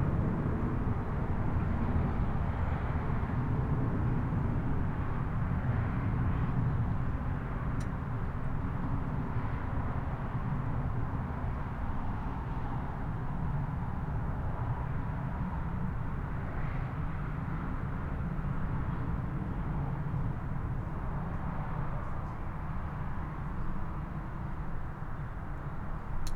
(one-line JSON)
{"title": "Niévroz, Rue Henri Jomain, electric gate", "date": "2011-12-24 18:31:00", "description": "Electric gate and a plane near the end.\nSD-702, Me-64, NOS.", "latitude": "45.82", "longitude": "5.07", "altitude": "184", "timezone": "Europe/Paris"}